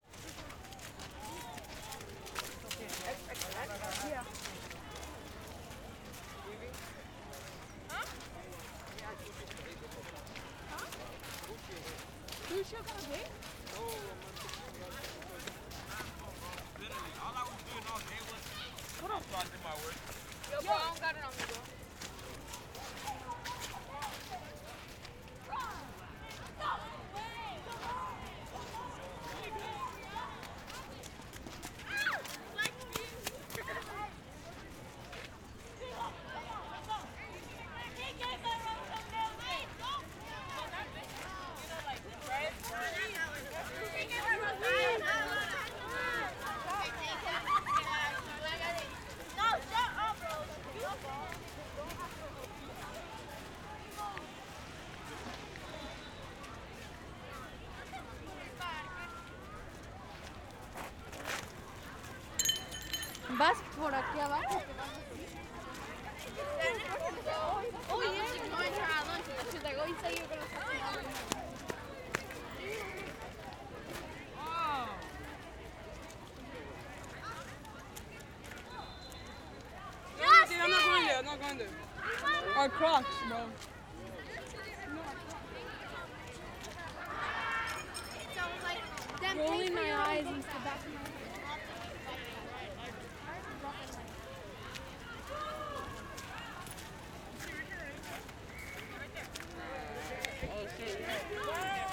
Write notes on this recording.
Ridgewood students return home and walk through snow and little puddles of water at Rosemary Park.